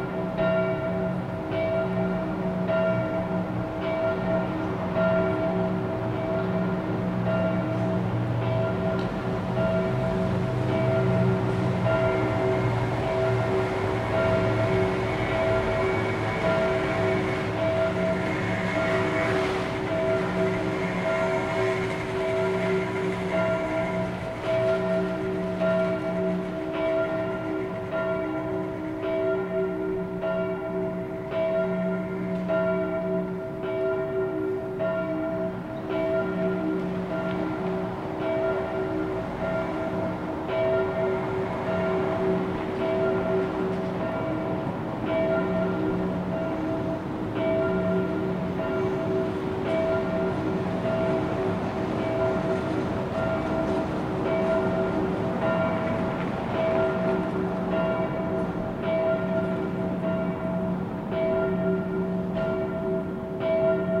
Neukölln, Berlin, Deutschland - Totengeläut zum Gedenken an auf der Flucht gestorbene Menschen
Recorded from my balcony: on the event of the global refugees day, churches were called to chime bells for refugees who died while fleeing. Luckily the one in Nansenstrasse joined.
This is a 3 min extract from a recording 8 pm until 8.15 pm.
beyerdynamic mic / sound device recorder